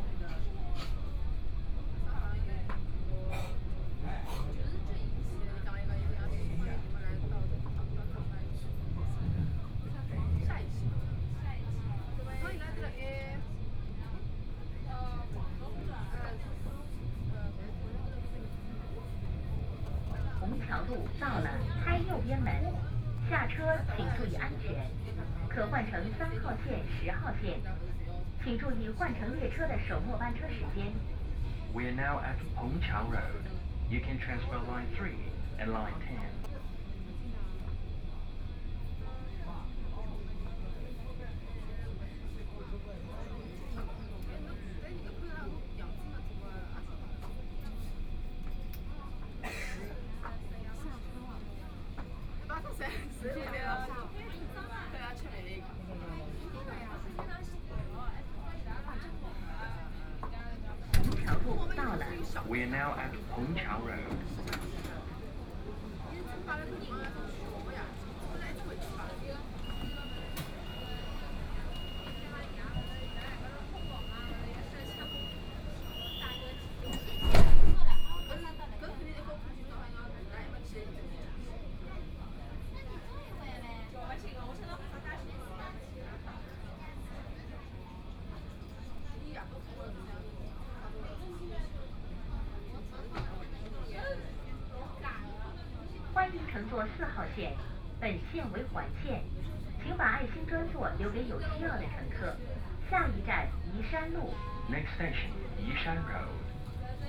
Changning District, Shanghai - Line 4 (Shanghai Metro)
from Zhongshan Park Station to Yishan Road Station, Binaural recording, Zoom H6+ Soundman OKM II
Shanghai, China